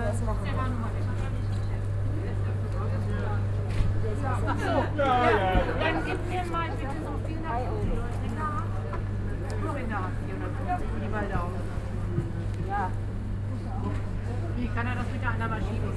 Green Market, Marktpl., Hamm, Germany - walking along stalls
walking East to West along the stalls, beginning in Oststr.
fewer stalls, fewer shoppers than other wise, every one waiting patiently in queues, chatting along…